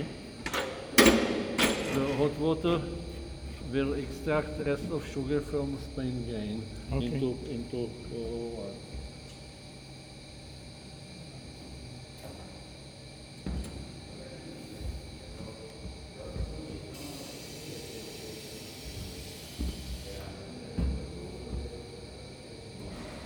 Brewery Moucha, sounds of brewing craft beer, Údolní, Praha-Praha, Czechia - Adding precisely 2 minutes of hot water
On my visit to the Moucha Brewery I was very kindly given a small tour by Jan the head brewer there. Brewing was his life. He was previously employed by the old brewery when the full scale industry operated in Braník years ago. Today’s craft brewery is smaller in scale and a relatively quiet process. He allowed me to record a couple of the events which made sound. This one is adding hot water to the vats where the grain is fermenting. It must last for precisely two minutes.
Praha, Česko, 7 April 2022